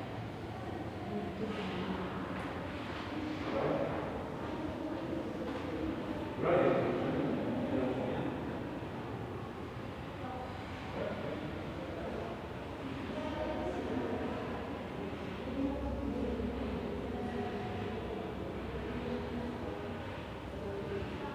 Villa Arson, Avenue Stephen Liegeard, Nice, France - Hallway ambience, voices and footsteps
A group of children in a room nearby, someone whistling, people talking and walking, dropping things somewhere down the corridor.
The walls, floors and ceilings are all made of concrete so reflects sound very well.